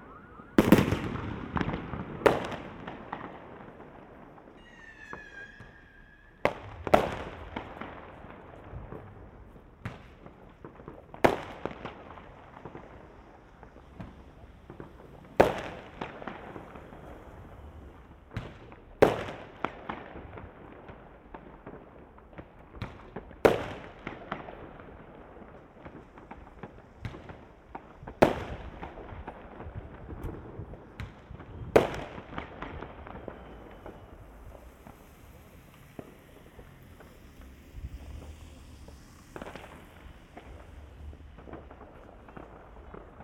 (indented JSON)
{
  "title": "New Year's fireworks, Severodvinsk, Russia - New Year's fireworks",
  "date": "2014-01-01 00:20:00",
  "description": "New Year's fireworks.",
  "latitude": "64.54",
  "longitude": "39.78",
  "altitude": "7",
  "timezone": "Europe/Moscow"
}